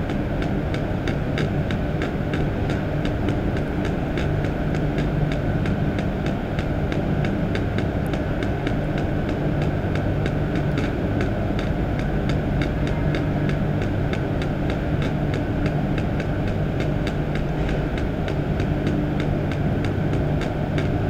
A strange weird unusual electric problem on this tower. I never heard this again.
Rumelange, Luxembourg, May 2015